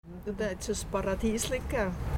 Marzili, das Paradiesli - Marzili, das Paradisli
der Kosename für das Frauenbad im Marzili